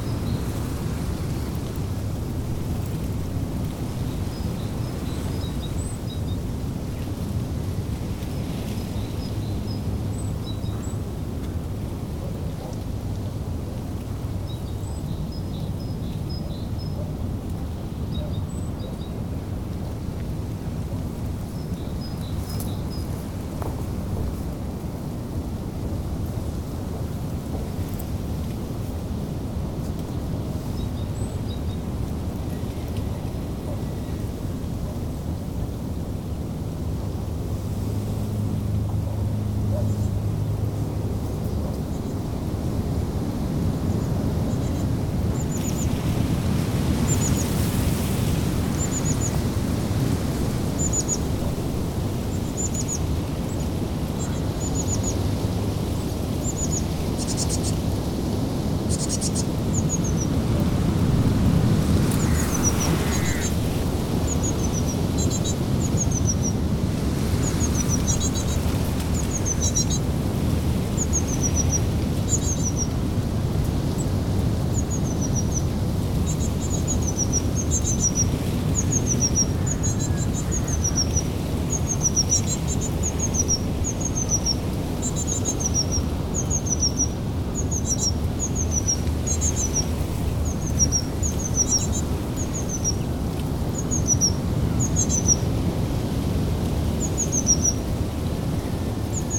Plas Bodfa, Ynys Mon, Cymru - Sounds from Plas Bodfa garden.
Three recordings taken at Plas Bodfa. The first two are recorded in the front garden and are of birds and the wind in the bushes, the sea ( and possibly traffic ) in the backround; the third is recorded in the kitchen garden.
Tascam DR 05X, edited in Audacity.